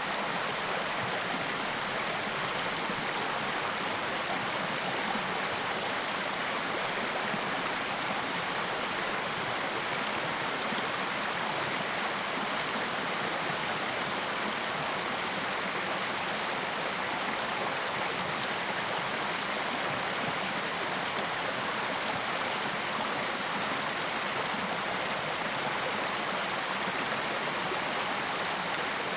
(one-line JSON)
{"title": "West Dart River, Wistman's Wood", "date": "2012-09-21 14:43:00", "description": "Recorded in 2012, this is the sound of water flowing along the West Dart River, just west of Wistman's Wood in Devon. Mostly the sound of water but also insects. Recorded on a Zoom H2n", "latitude": "50.58", "longitude": "-3.96", "altitude": "379", "timezone": "Europe/London"}